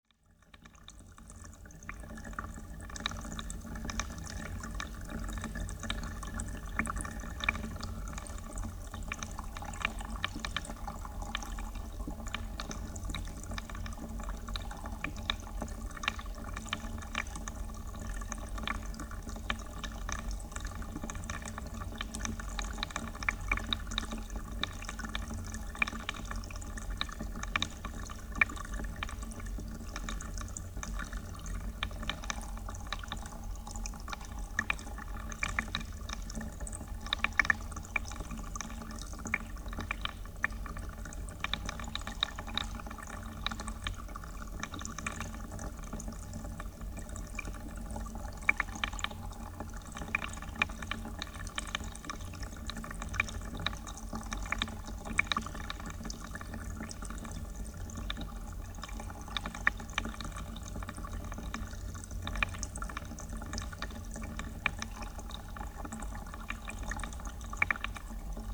fallen branch of a tree in a spreinghead as heard through contact microphones